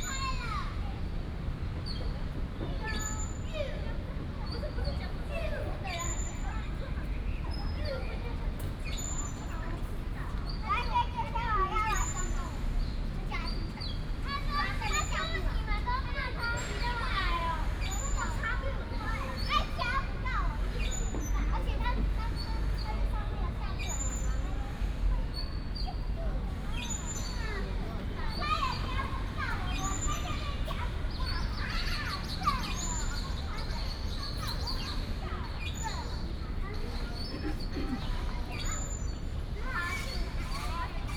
德安公園, Taipei City - in the Park
Children's play area, Birds sound, traffic sound, Swing, .
Taipei City, Taiwan